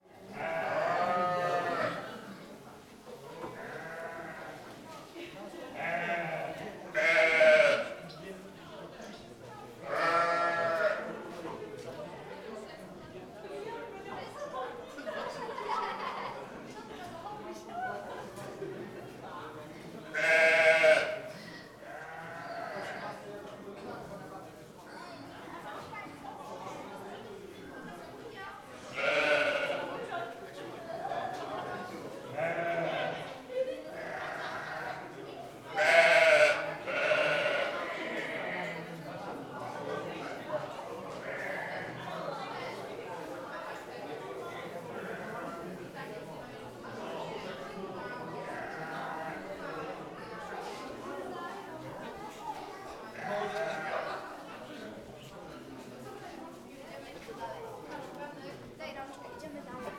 Szreniawa, National Museum of Farming, barn - visitors among farm animals
visitors walk and talk around the barn looking at pigs, goats, chicken, rabbits and other small farm animals.
Szreniawa, Poland